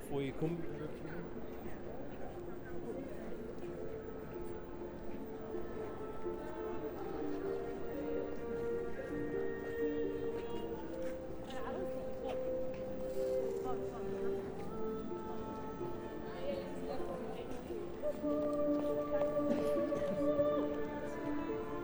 Stephansdom, Wien, Austria - Street Music
22 January 2017